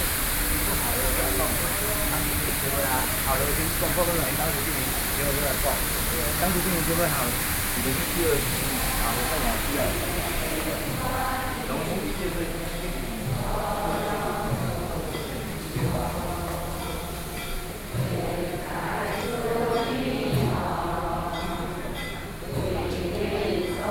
Longshan Temple, Taipei City - To enter the temple